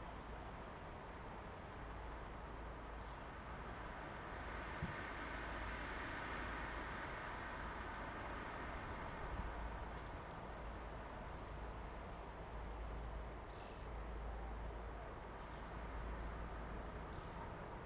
馬山三角堡, Jinsha Township - wind and the tree
Birds singing, wind and the tree
Zoom H2n MS +XY